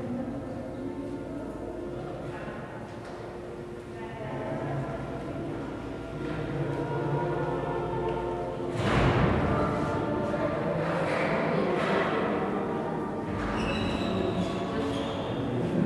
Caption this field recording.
Palais des Archevêques, Captation : ZOOMh4n